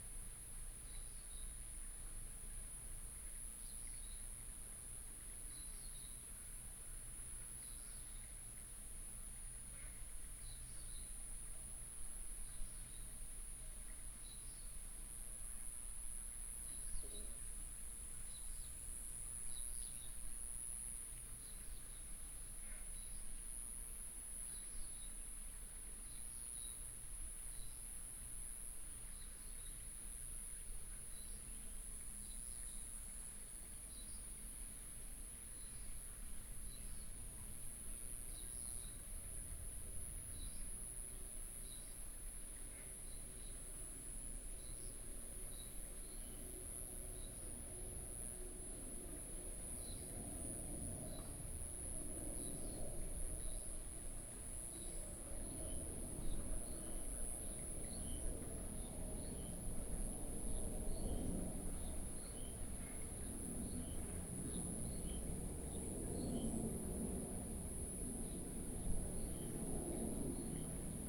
復興區壽山宮, Taoyuan City - Small countryside
Bird call, Small countryside, The plane flew through, Frog